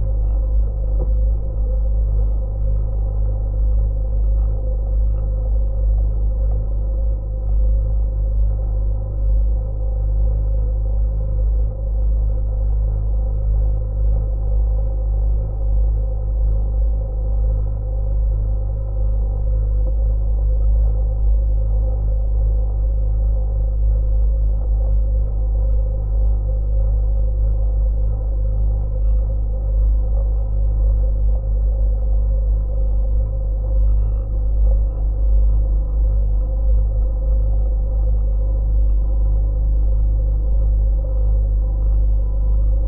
Minija, Lithuania, motor boat vibration
Geophone on the bottom of wooden boat with motor
Klaipėdos apskritis, Lietuva, 22 July 2022, ~16:00